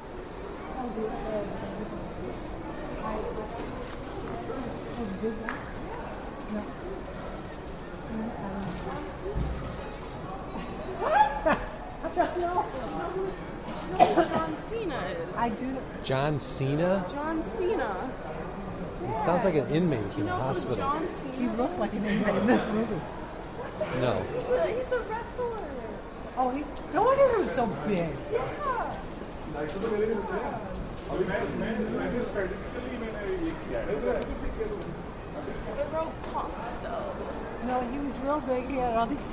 Woodfield Mall, Schaumburg, IL, USA - Santa, Christmas Eve
24 December, ~18:00